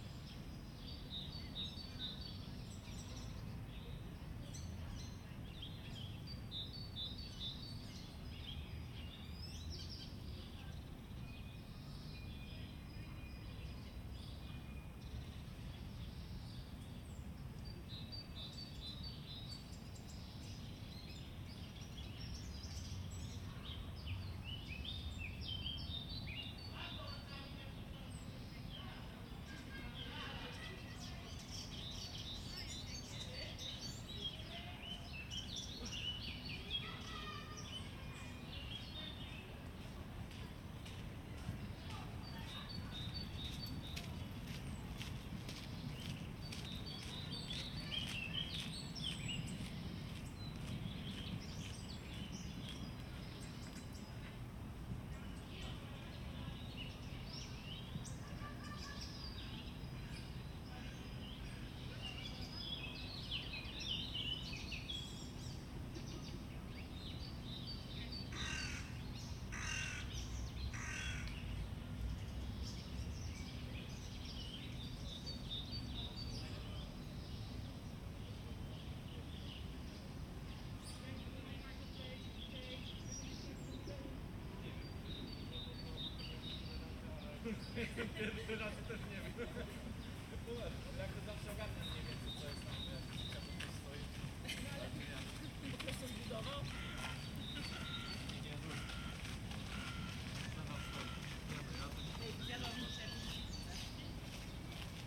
Ogród Saski, Warszawa, Polska - Under the Temple of Vesta in the Saxon Garden
A peaceful afternoon in the Saxon Garden in Warsaw - chirping birds - starlings - crows - passing people - bicycles.
Recording made with Zoom H3-VR, converted to binaural sound